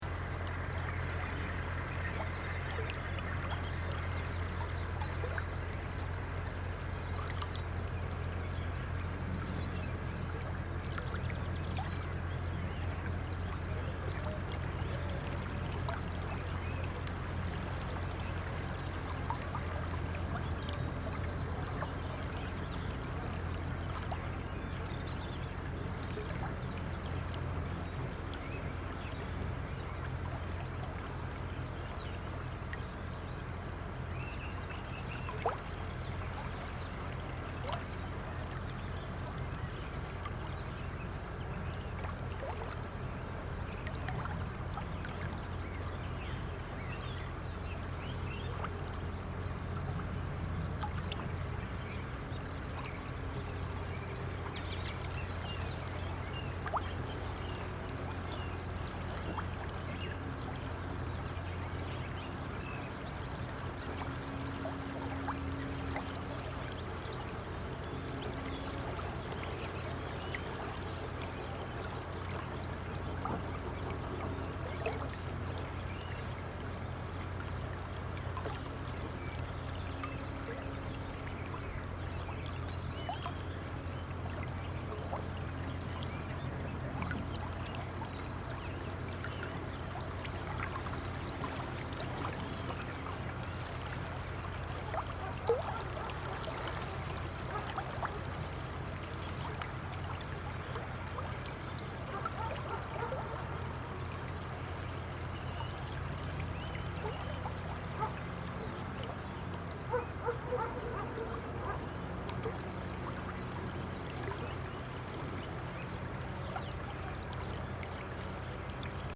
Tua - 2, Portugal - Tua no Inverno-2, Portugal
Tua, Portugal Mapa Sonoro do Rio Douro Douro River Sound Map
17 February 2014, ~11:00